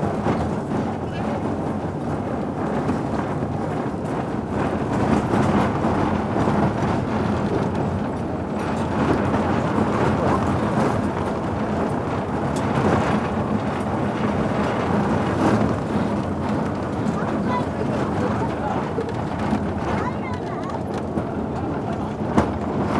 {"title": "Spreepark Berlin, Plänterwald - train ride", "date": "2012-06-10 11:40:00", "description": "ride on a small train, through the derelict Spreepark area, along the rusty ferries wheel, rotten buildings and overgrown fun fair grounds.\n(Sony PCM D50 120°)", "latitude": "52.49", "longitude": "13.49", "altitude": "33", "timezone": "Europe/Berlin"}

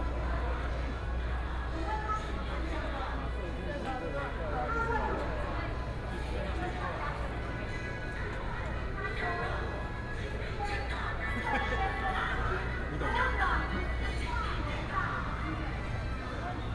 {"title": "Qingdao E. Rd., Zhongzheng Dist. - Walking through the site in protest", "date": "2014-03-19 21:47:00", "description": "Walking through the site in protest, Traffic Sound, People and students occupied the Legislature\nBinaural recordings", "latitude": "25.04", "longitude": "121.52", "altitude": "15", "timezone": "Asia/Taipei"}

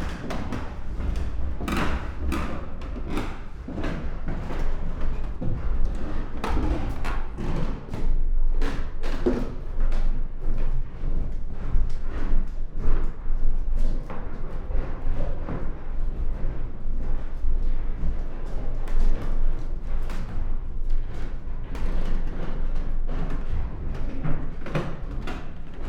{"title": "bell tower, Euphrasian Basilica Complex, Poreč, Croatia - wooden stairs", "date": "2013-07-20 12:34:00", "description": "stairs, walk, steps", "latitude": "45.23", "longitude": "13.59", "timezone": "Europe/Zagreb"}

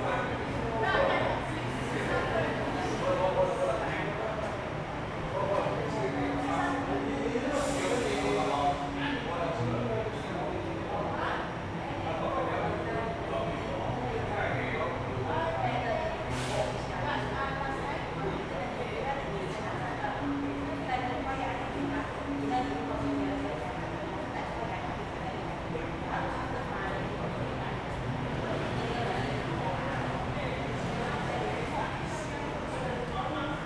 {"title": "Kuo-Kuang Motor Transportation - Bus station hall", "date": "2012-03-29 23:55:00", "description": "Bus station hall at night, Sony ECM-MS907, Sony Hi-MD MZ-RH1", "latitude": "22.64", "longitude": "120.30", "altitude": "12", "timezone": "Asia/Taipei"}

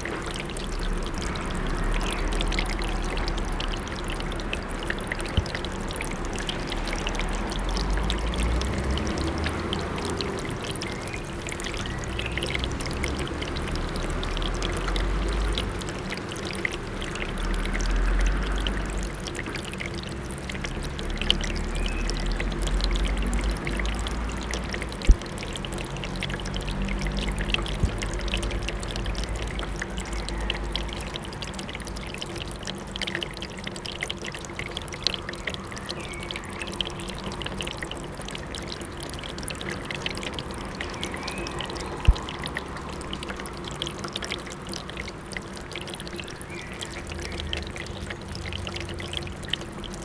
{"title": "Wojska Polskiego / Mickiewicza - Storm is over, water lasts.", "date": "2018-06-26 11:07:00", "description": "Worm, sunny day. Short, strong storm. House on the corner. Downpour residue dripping from the roof into the gutters.\nZoom h4n fighting his next battle with moisture.", "latitude": "52.13", "longitude": "20.65", "altitude": "102", "timezone": "Europe/Warsaw"}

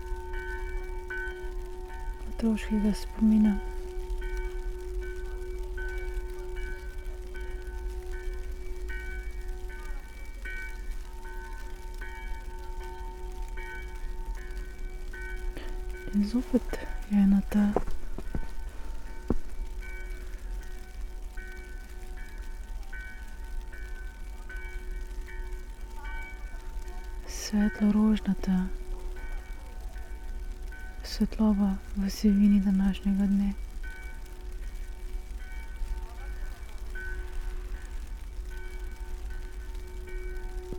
{"title": "sonopoetic path, Maribor, Slovenia - walking poem", "date": "2013-01-24 16:59:00", "description": "snow flakes and umbrella, steps, snow, spoken words", "latitude": "46.57", "longitude": "15.65", "altitude": "289", "timezone": "Europe/Ljubljana"}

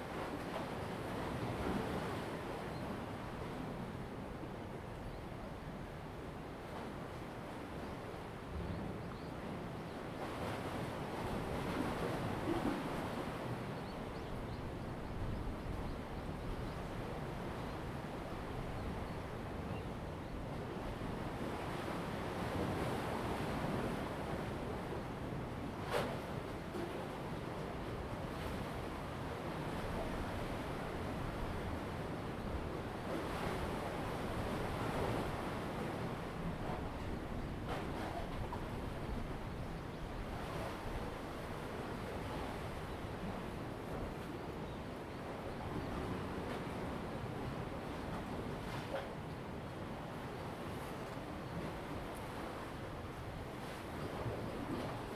{"title": "Koto island, Lanyu Township - On the bank", "date": "2014-10-29 15:50:00", "description": "On the bank, Tide and Wave\nZoom H2n MS+XY", "latitude": "22.00", "longitude": "121.58", "altitude": "7", "timezone": "Asia/Taipei"}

